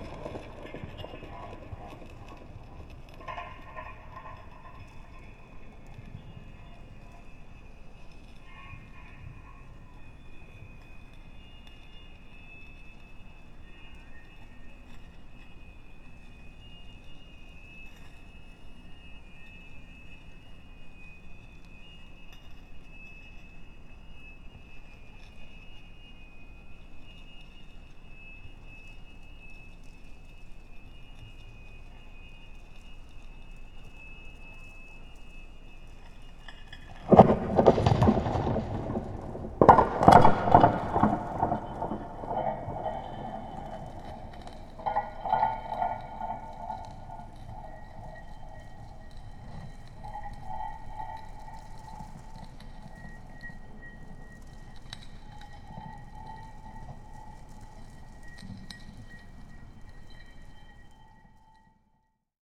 Seaplane Hangar Tallinn, Raviv installation tests
and testing a special sound installation in the hangar
27 May 2010, ~22:00